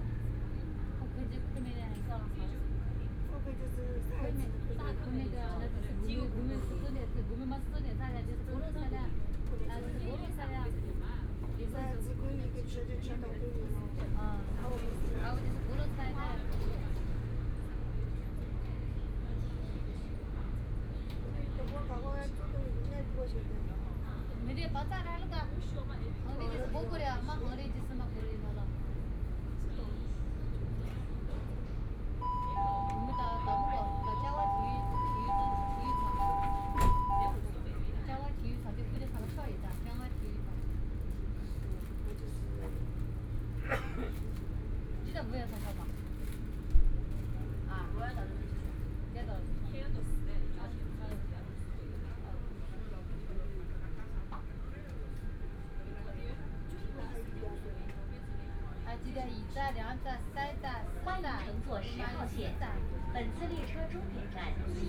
Hongkou District, Shanghai - Line 10(Shanghai metro)
From East Nanjing Road to Tongji University station, The sound of the crowd, Train broadcast messages, Binaural recording, Zoom H6+ Soundman OKM II
Hongkou, Shanghai, China, 21 November 2013, 16:59